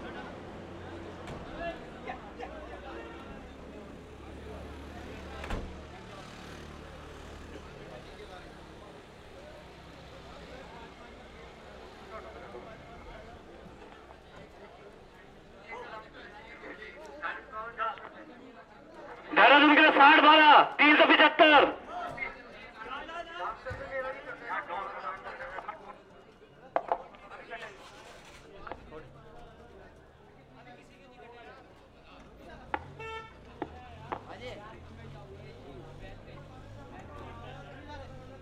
The Mall Road, Mussoorie, Uttarakhand, Inde - Bus & Taxi Station - Mussoree
Bus & Taxi Station - Mussoree
Ambiance